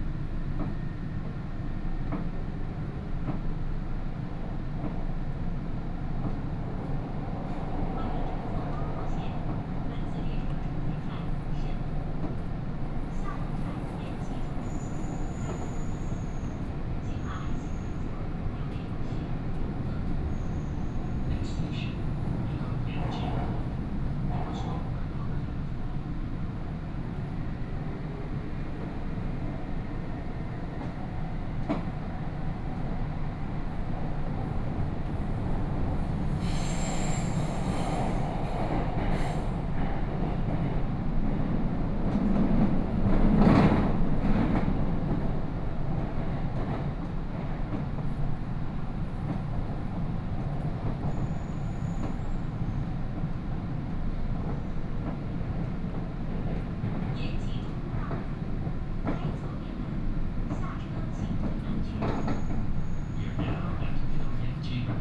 Yangpu District, Shanghai - Line 8 (Shanghai Metro)
from Huangxing Park Station to Middle Yanji Road Station, Binaural recording, Zoom H6+ Soundman OKM II
Shanghai, China, 26 November 2013, 14:51